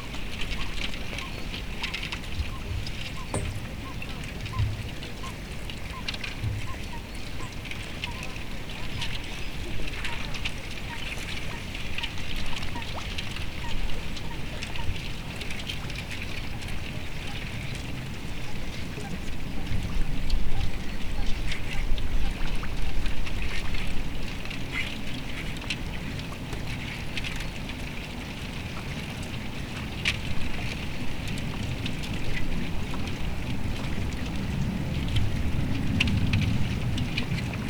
berlin, grunewald: havelufer - the city, the country & me: alongside havel river
colliding ice sheets at the riverside of the havel river
the city, the country & me: march 24, 2013
Deutschland, European Union